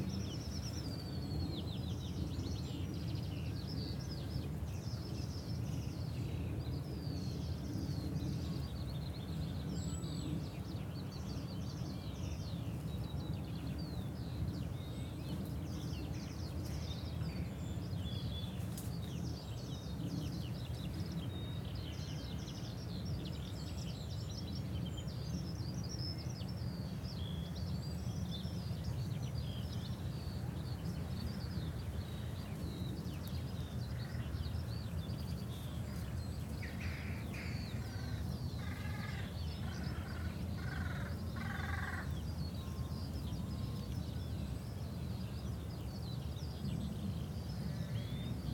...a continuation of the earlier recording made in this beautiful, peaceful field of sheep and birds.
Reading, UK, 1 May 2017